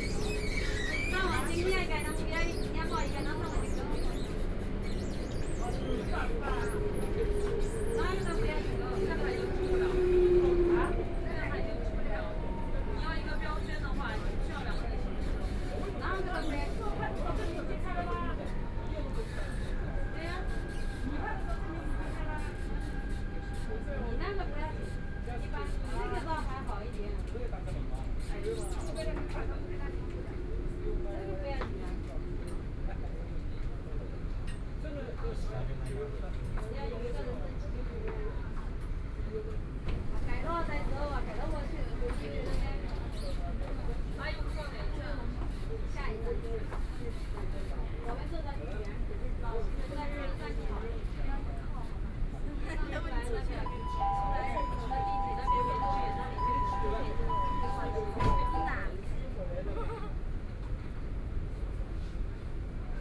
Huangpu District, Shanghai - Line 10 (Shanghai Metro)
from South Shaanxi Road Station to Laoximen Station, Binaural recording, Zoom H6+ Soundman OKM II
Shanghai, China, 3 December 2013, 15:11